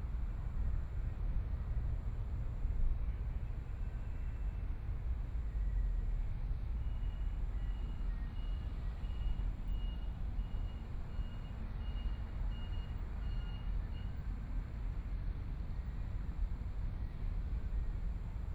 台東市馬卡巴嗨公園 - Sitting on the beach
Sitting on the beach, Sound of the waves, People walking, Near the temple of sound, The distant sound of fireworks, Binaural recordings, Zoom H4n+ Soundman OKM II ( SoundMap2014016 -25)